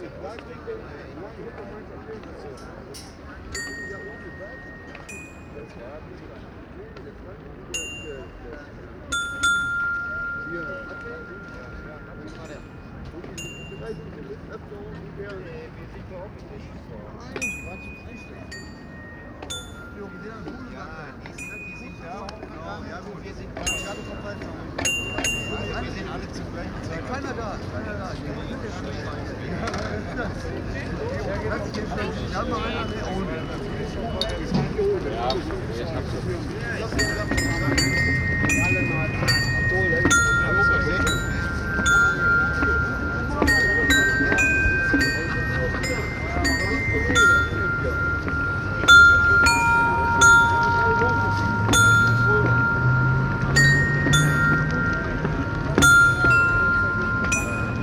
Stadtkern, Essen, Deutschland - essen, willy brandt square, sounding play stones
Am Willy Brandt Platz nahe einer Rolltreppe. Der Klang klingender, hier in den Boden eingelassener Spielsteine. Im Hintergrund Gespräche einer Gruppe Alkoholiker und Verkehrsgeräusche der naheliegenden Straße
At the Willy Brandt Platz nearby a moving staircase. The sound of sounding play stones on the pavement. In the background a group of alcoholics and the traffic noise of the nearby street.
Projekt - Stadtklang//: Hörorte - topographic field recordings and social ambiences
29 March 2014, 15:30